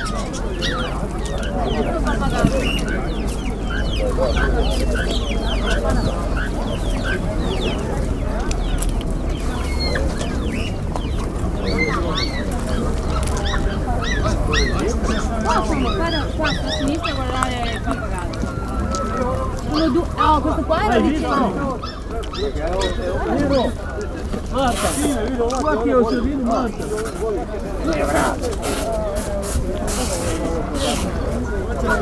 Benkovac, Benkovački sajam, Kroatien - living animals
The section of fowl, rabbits and dogs on the trade fair. Beside the sound of chicken, ducks, goose and songbirds you hear the strapping of adhesive tape, with which the cardboxes are closed, when an animal has been sold.
Croatia, 10 October 2013